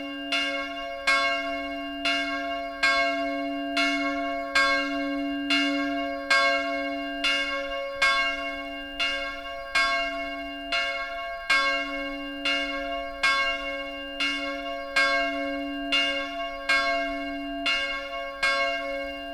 Park Dugave, Ulica Svetog Mateja, Zagreb, Hrvatska - Church bells
Snow falls on my umbrella as I record the chiming of the bells.Recorded with Zoom H4n.